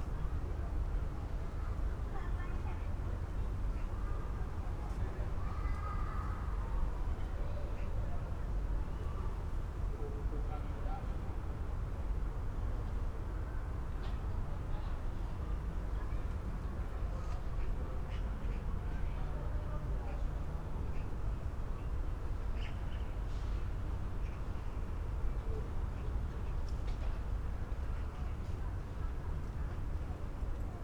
Bruno-Apitz-Straße, Berlin Buch - building block, inner yard, early evening ambience
Berlin Buch, inner yard between building blocks, domestic sounds on a Sunday early evening in late Summer
(Sony PCM D50, Primo EM172)